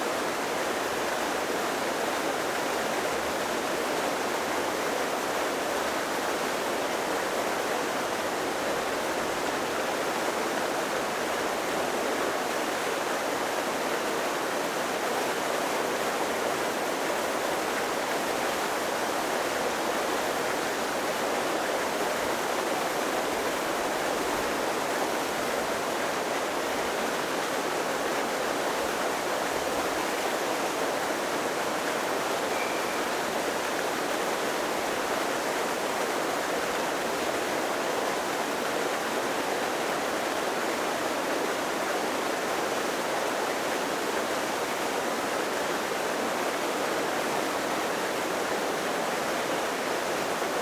Sounds of the artificial waterfall located at 100 UN Plaza.